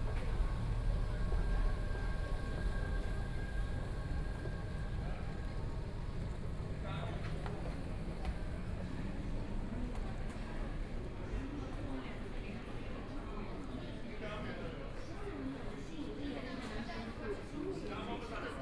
Jiaotong University Station, Shanghai - walking in the station
walking in the station, Binaural recording, Zoom H6+ Soundman OKM II